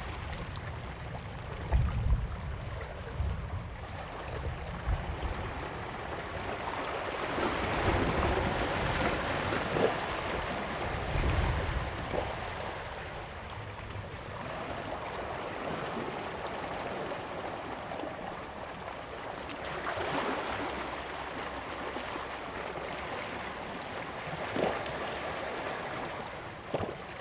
烏石鼻, Taiwan - the waves and Thunder
Sound of the waves, Thunder sound
Zoom H2n MS +XY